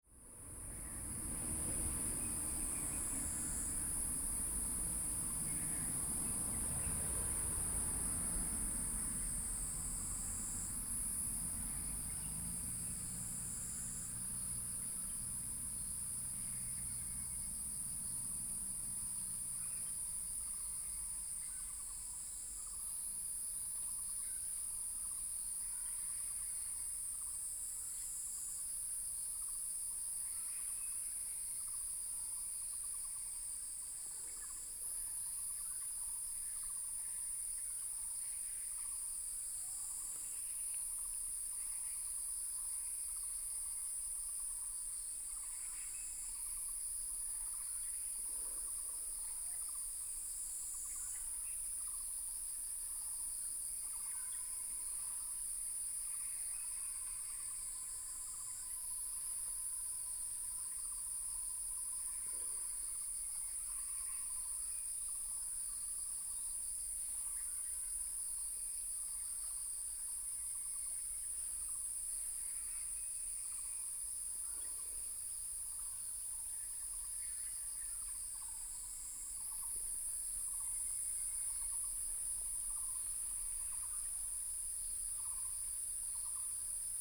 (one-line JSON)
{"title": "明峰村, Beinan Township - Birdsong and Frogs", "date": "2014-09-07 07:59:00", "description": "In the morning, Birdsong, Frogs, Traffic Sound", "latitude": "22.87", "longitude": "121.10", "altitude": "277", "timezone": "Asia/Taipei"}